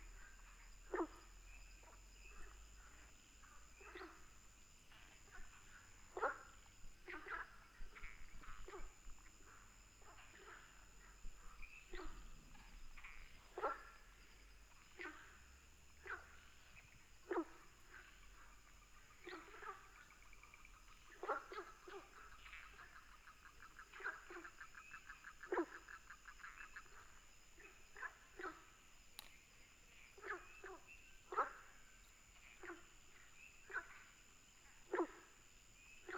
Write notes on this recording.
Frogs chirping, Ecological pool, Firefly habitat